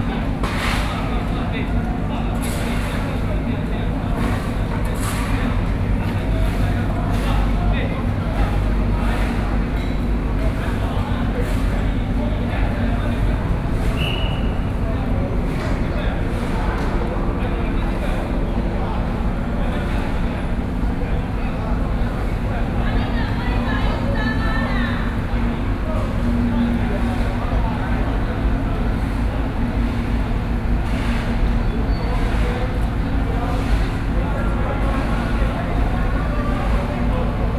成功漁港, Chenggong Township - In the fishing port
In the fishing port, The weather is very hot
Taitung County, Taiwan, 6 September 2014, ~15:00